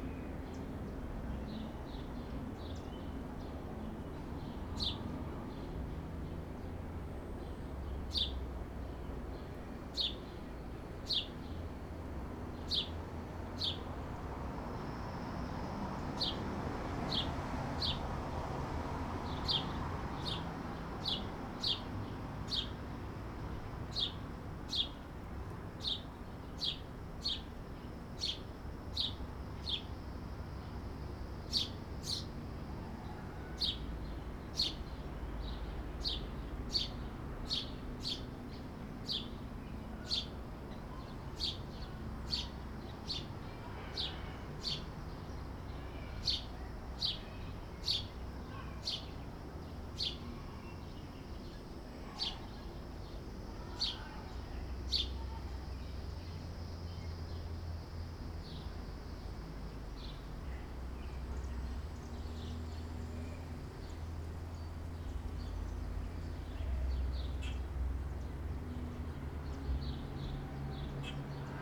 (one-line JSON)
{"title": "Nebraska City, NE, USA - Train", "date": "2013-05-15 14:00:00", "description": "Recorded with Zoom H2. Recordings from Nebraska City while in residence at the Kimmel Harding Nelson Center for the Arts in Nebraska City from May 13 – May 31 2013. Source material for electro-acoustic compositions and installation made during residency.", "latitude": "40.67", "longitude": "-95.85", "altitude": "312", "timezone": "America/Chicago"}